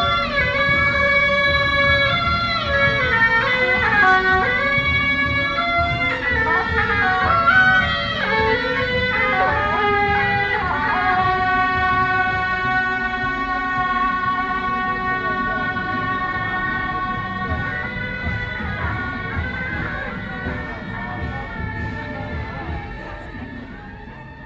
{
  "title": "永福街, Sanchong Dist., New Taipei City - Traditional temple festivals",
  "date": "2012-11-04 09:57:00",
  "latitude": "25.08",
  "longitude": "121.48",
  "altitude": "7",
  "timezone": "Asia/Taipei"
}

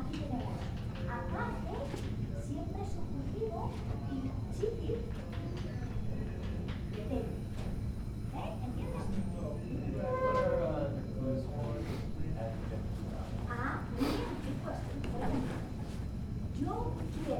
{
  "title": "neoscenes: Spanish class and Physics lobby",
  "date": "2011-10-27 13:44:00",
  "latitude": "40.01",
  "longitude": "-105.27",
  "altitude": "1639",
  "timezone": "America/Denver"
}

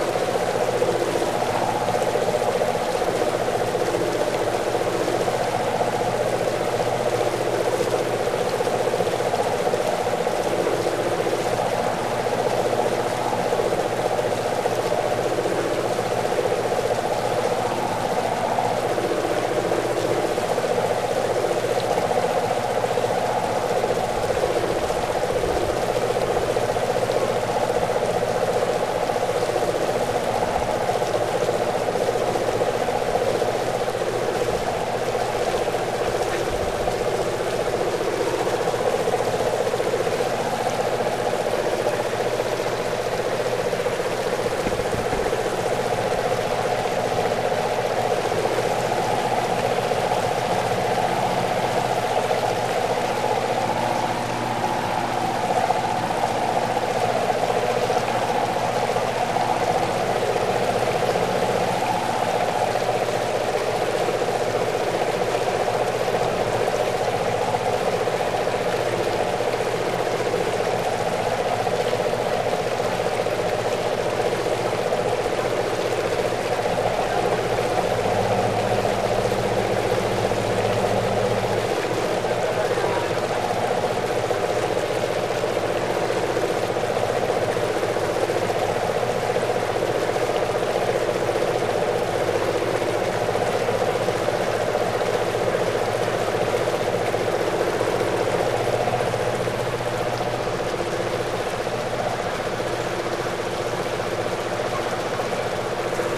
{
  "title": "20091021On the Ferry",
  "description": "Cijin Ferry, KaoShiung. Taiwanese Broadcasting system.",
  "latitude": "22.62",
  "longitude": "120.27",
  "altitude": "4",
  "timezone": "Europe/Berlin"
}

